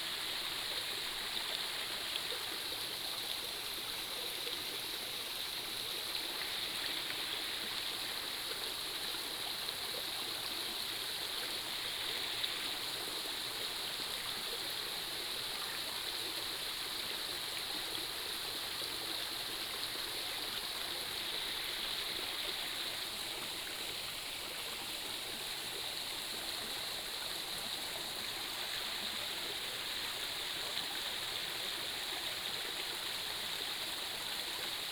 茅埔坑溪生態公園, Puli Township - The sound of water streams
The sound of water streams